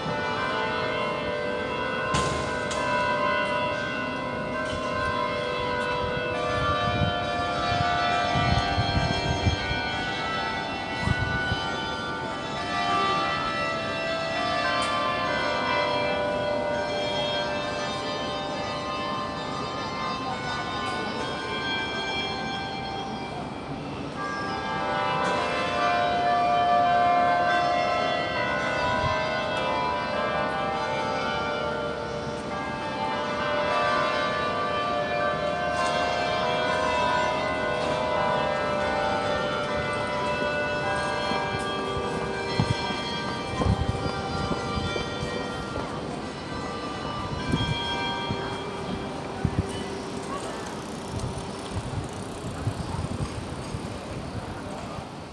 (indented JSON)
{
  "title": "Oslo Rådhus, Oslo, Norvegia - The carillon in Oslo City",
  "date": "2019-08-12 16:00:00",
  "description": "Oslo: The carillon in the Oslo City Hall’s bell tower.",
  "latitude": "59.91",
  "longitude": "10.73",
  "altitude": "18",
  "timezone": "Europe/Oslo"
}